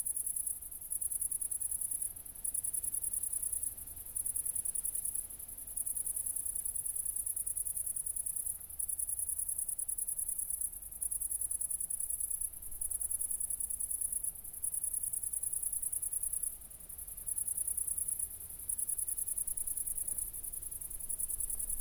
{
  "title": "brandenburg/havel, kirchmöser, nordring: garden - the city, the country & me: crickets",
  "date": "2014-08-04 00:53:00",
  "description": "crickets, upcoming wind, frogs in the distance\nthe city, the country & me: august 4, 2014",
  "latitude": "52.39",
  "longitude": "12.44",
  "altitude": "29",
  "timezone": "Europe/Berlin"
}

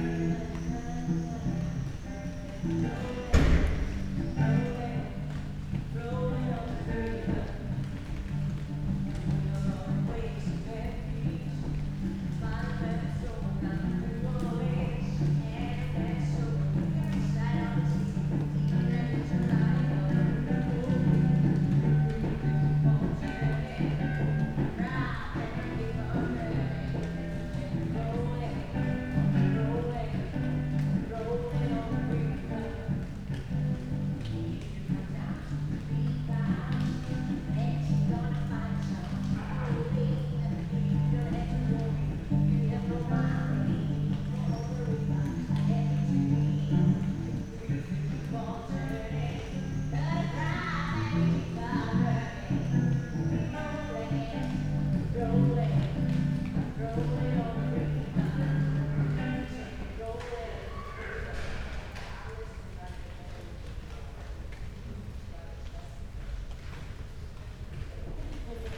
{
  "title": "berlin, friedelstraße: backyard window - the city, the country & me: backyard window, raindrops, rehearsing musicians",
  "date": "2014-07-25 21:48:00",
  "description": "raindrops hitting leaves, musicians rehearsing in a flat\nthe city, the country & me: july 25, 2014",
  "latitude": "52.49",
  "longitude": "13.43",
  "altitude": "46",
  "timezone": "Europe/Berlin"
}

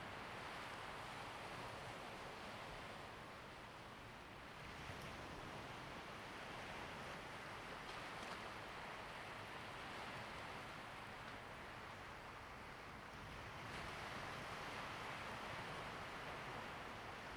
{
  "title": "Dabaisha Diving Area, Lüdao Township - At the beach",
  "date": "2014-10-30 14:52:00",
  "description": "At the beach, sound of the waves\nZoom H2n MS +XY",
  "latitude": "22.64",
  "longitude": "121.49",
  "altitude": "10",
  "timezone": "Asia/Taipei"
}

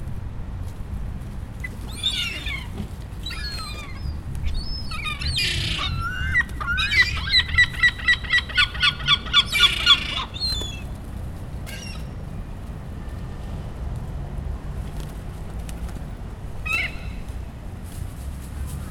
{
  "title": "Vitória, Portugal - Cordoaria Garden, Porto",
  "date": "2014-12-14 15:20:00",
  "description": "João Chagas Garden - popularly known as the Cordoaria Garden in Porto.\nSounds of seagulls and pigeons eating bread crumbs.\nTraffic and the sound of an ambulance.\nZoom H4n",
  "latitude": "41.15",
  "longitude": "-8.62",
  "altitude": "84",
  "timezone": "Europe/Lisbon"
}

